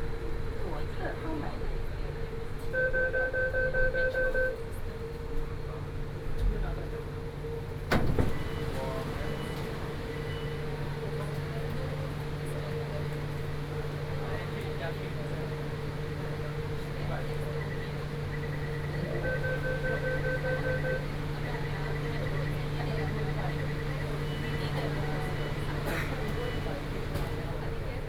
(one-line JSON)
{"title": "Taipei Metro Brown Line", "date": "2013-07-09 16:18:00", "description": "from Songshan Airport Station to Xihu Station, Sony PCM D50 + Soundman OKM II", "latitude": "25.07", "longitude": "121.55", "altitude": "2", "timezone": "Asia/Taipei"}